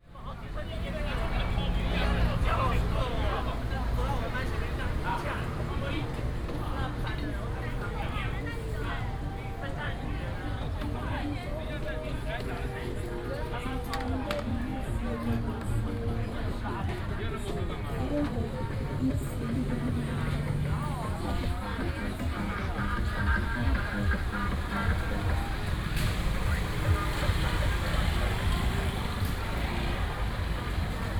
英才觀光夜市, Miaoli City - Walking in the night market
Walking in the night market